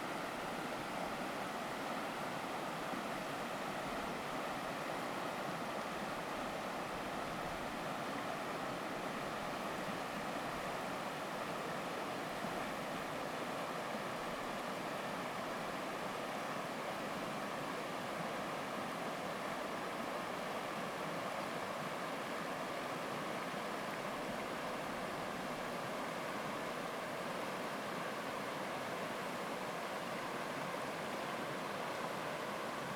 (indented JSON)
{
  "title": "達仁鄉大竹溪, Tuban, Daren Township - In the river bed",
  "date": "2018-04-13 15:07:00",
  "description": "In the river bed, traffic sound, Bird call, Stream sound\nZoom H2n MS+XY",
  "latitude": "22.45",
  "longitude": "120.88",
  "altitude": "134",
  "timezone": "Asia/Taipei"
}